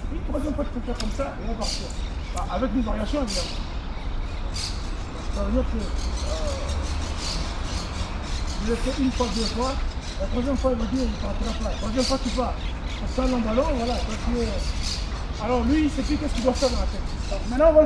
Brussels, Parc Tenbosch, football training.
Ixelles, Parc Tenbosch, entrainement de foot.
Ixelles, Belgium, February 2011